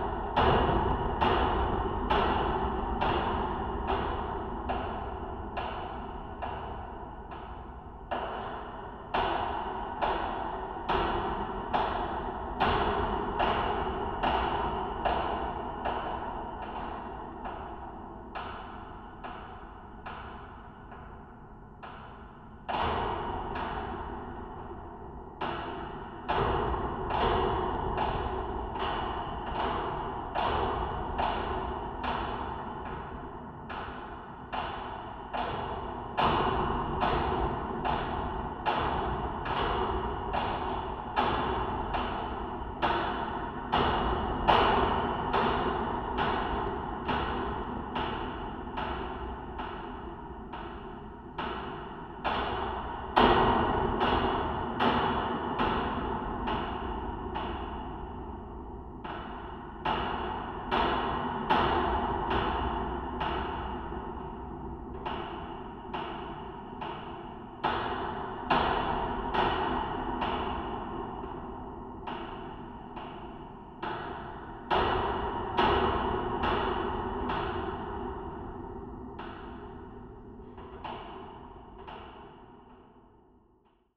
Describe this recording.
Wind in a construction barrier, an old thread hits the grid. Audiotalaia contact microphones.